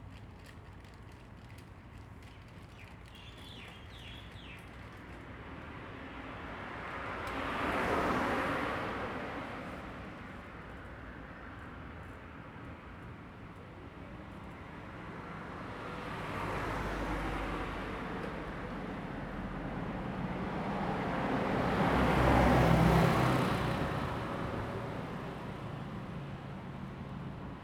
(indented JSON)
{
  "title": "Jilin Rd., Taitung City - In front of the convenience store",
  "date": "2014-09-08 07:36:00",
  "description": "Birds singing, Traffic Sound, In front of the convenience store\nZoom H2n MS+XY",
  "latitude": "22.79",
  "longitude": "121.19",
  "altitude": "13",
  "timezone": "Asia/Taipei"
}